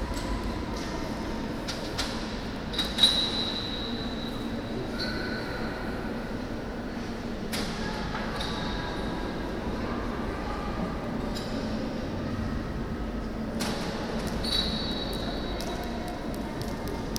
Lille-Centre, Lille, Frankrijk - Central Hall, Palais Des Beaux - Arts
The deafening reverb of the main hall in the Museum of Fine Arts in Lille, France.
It is one of the largest art museums in France and definitely worth a visit. The main source of this noise in this recording is the museum restaurant, located in the hall.
Binaural Recording
Lille, France, 12 August 2016